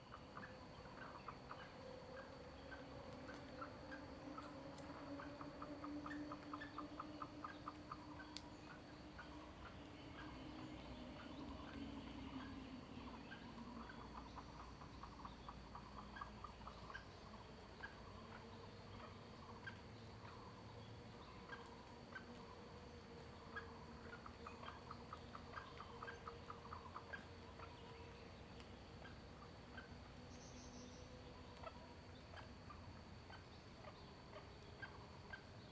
In the woods, Bird sounds, Traffic Sound
Zoom H2n MS+XY